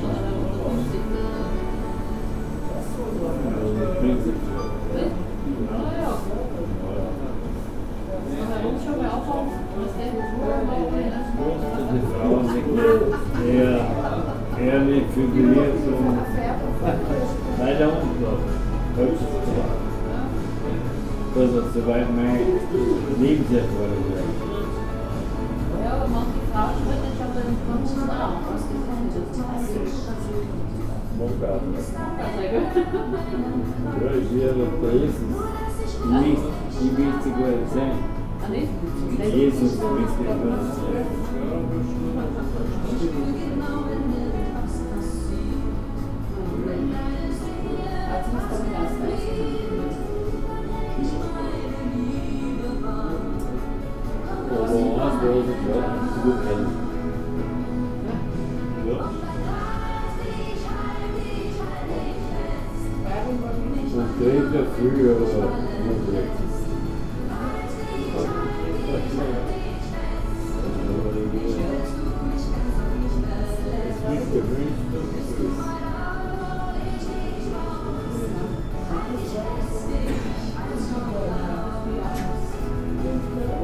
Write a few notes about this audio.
hilde's treff, rudolfstr. 24, 4040 linz